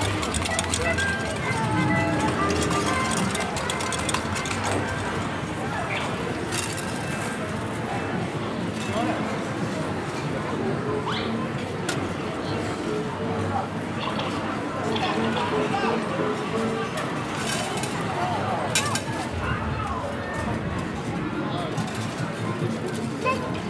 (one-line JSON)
{"title": "Brighton Pier, Brighton, United Kingdom - Music, money, and arcade machines", "date": "2015-04-04 11:00:00", "description": "A short trip through the sensory overload of the Brighton Pier arcade hall - pennies and flashing lights everywhere, an assault of holy noise.\n(rec. zoom H4n internal mics)", "latitude": "50.82", "longitude": "-0.14", "altitude": "1", "timezone": "Europe/London"}